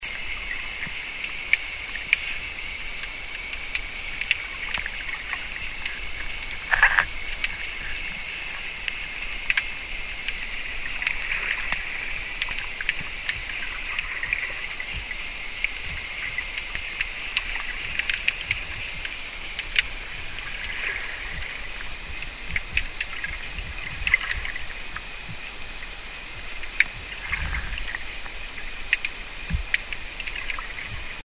Santa Croce. Underwater rain
Recording the rain under the surface of the sea with a contact microphone